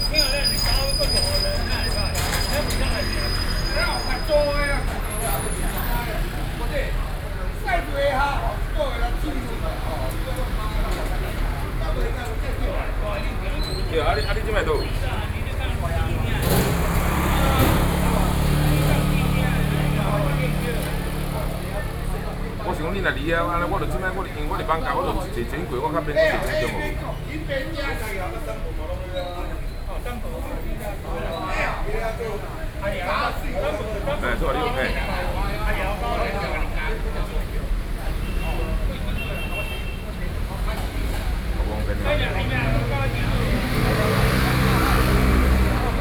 Kangding Rd., Wanhua Dist., 台北市 - Roadside
Wanhua District, Taipei City, Taiwan, 31 October 2012